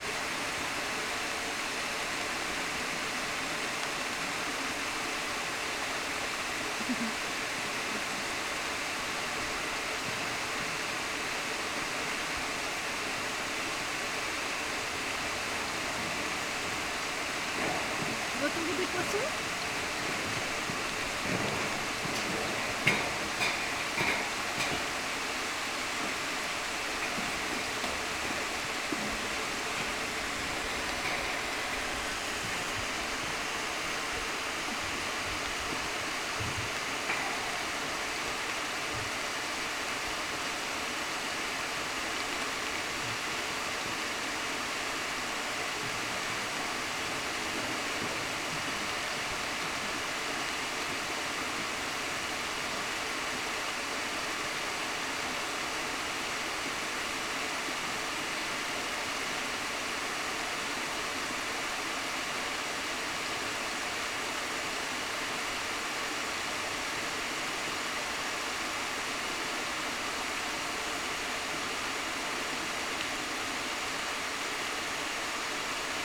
Barrage de Thurins - haut
Barrage de Thurins
en haut du barrage
Thurins, France, 7 November 2010, 17:23